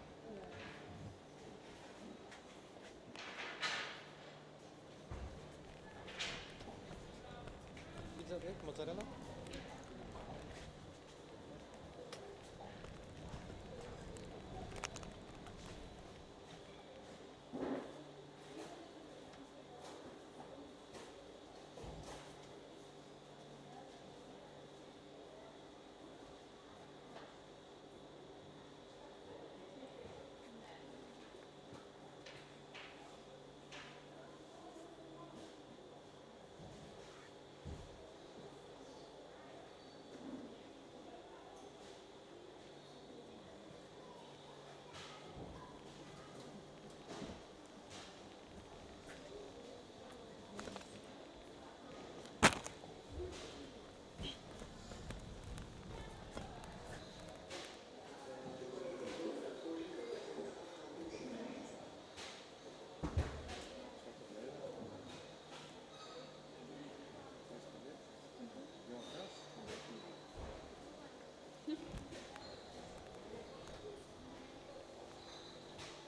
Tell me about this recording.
Zoom H-6 XY-mic on top of the groceries